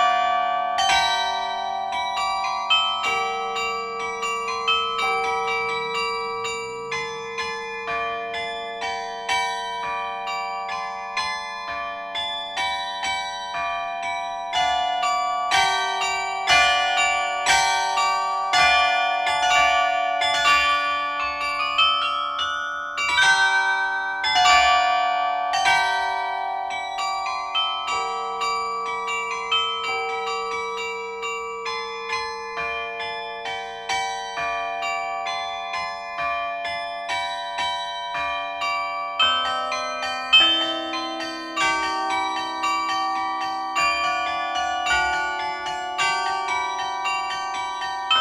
Pl. de la République, Bergues, France - Beffroi de Bergues
Beffroi de Bergues - Département du Nord
Maître carillonneur : Mr Jacques Martel